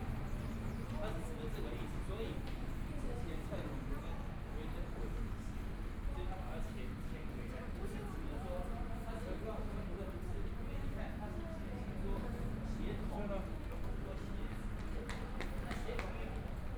From the beginning of the platform, Then through the underground passage, Out of the station
Binaural recordings
Zoom H4n+ Soundman OKM II + Rode NT4
Hualien County, Taiwan, 24 February 2014